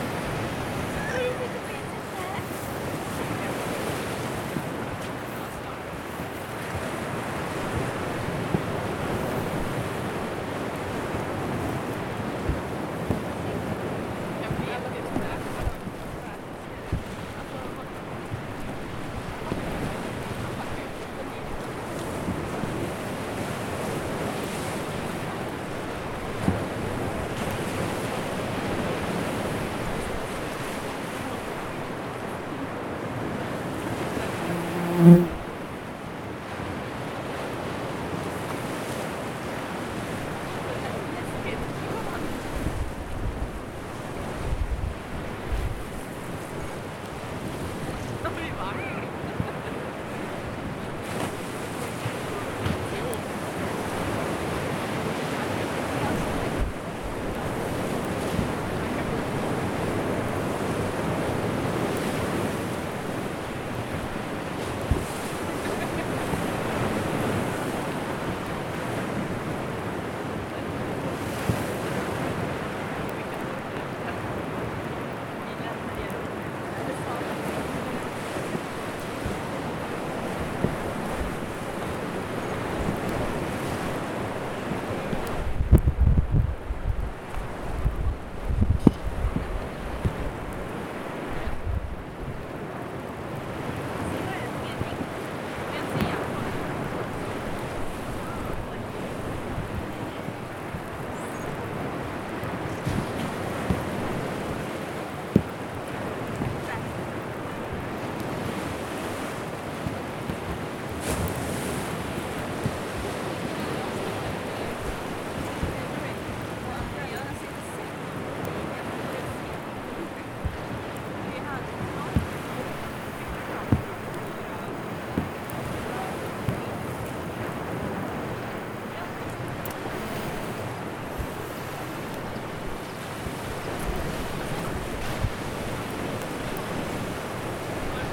Rotsidans naturreservat, by the seaside
In the very last of august a sonic picture of this stony beach in the nature reserve of Rotsidan is taken. One of this summers last (?) bumblebees is flying by as the rain is starting to drop on the zoom recorder. Some talks by two students under an umbrella is to be heard around 10 meters away with the seawaves surrounding another 30 meters away. Mobile-camera photoclicks and annoying mobile radiowaves interference in the ending.
Sweden